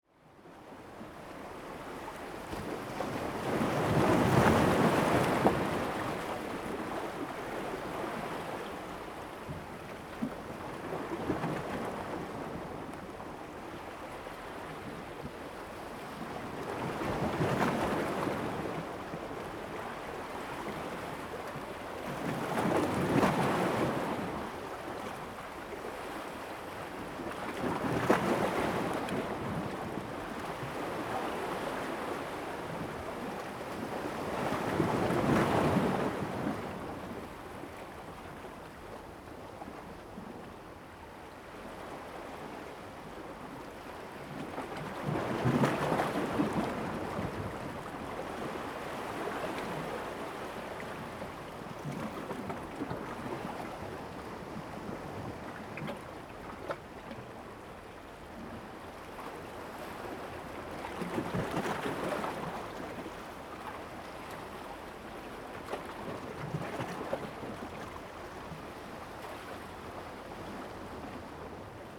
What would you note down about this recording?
sound of the waves, Zoom H2n MS+XY